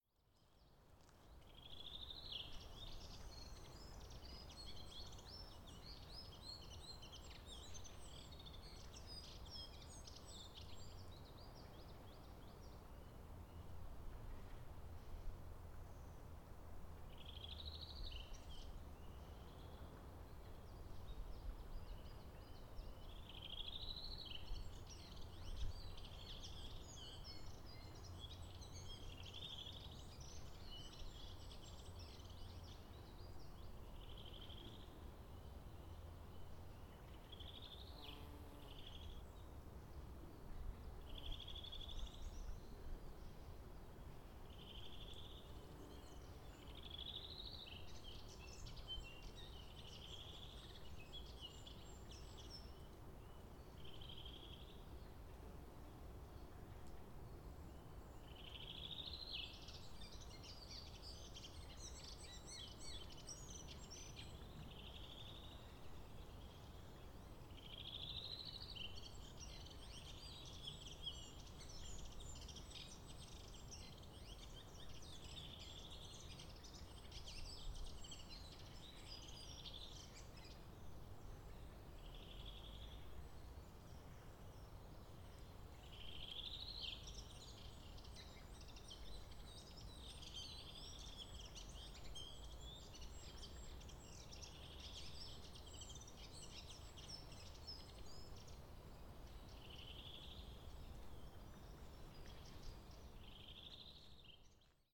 Kirovskiy rayon, Yekaterinburg, Sverdlovsk Oblast, Russia - Russian City forest interesting bird - b/g skyline
We took a bus to a forest area recommended by our host in Yekaterinburg and i came across this rather lovely bird singing, the file has been slightly edited to remove wind bumps.